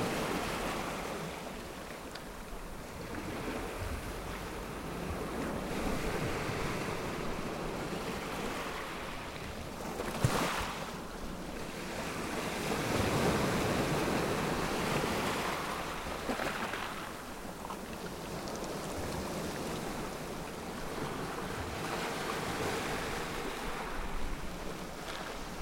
pebble beach near Poseidon Café, Palaiokastrites, Greece - ocean surf on pebble beach at palaiokastrites
Recorded on the pebble beach near Poseidon Café with a Sony PCM-M10